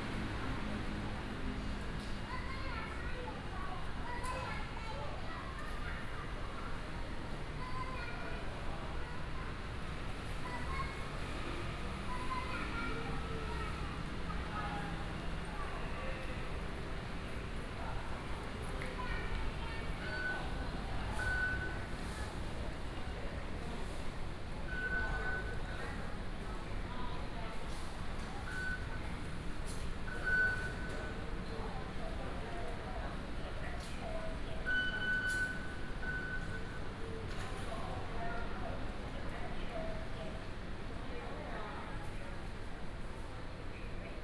Jingan Station, Zhonghe Dist., New Taipei City - In Hall MRT station
In Hall MRT station
Sony PCM D50+ Soundman OKM II
New Taipei City, Taiwan, 26 May 2012